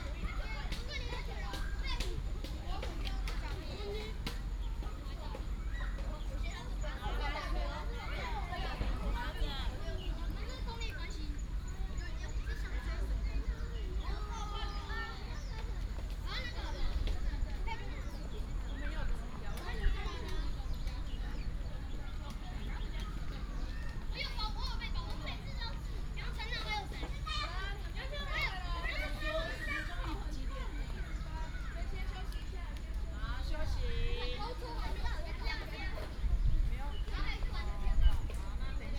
頭城鎮運動公園, Yilan County - Child
In Sports Park, Birdsong, Very hot weather
Toucheng Township, Yilan County, Taiwan, July 2014